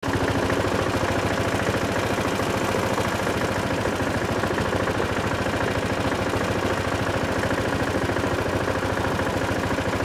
Niederrieden, Deutschland - Fendt Dieselross
recorded a Fendt Diesel Ross Tractor
Niederrieden, Germany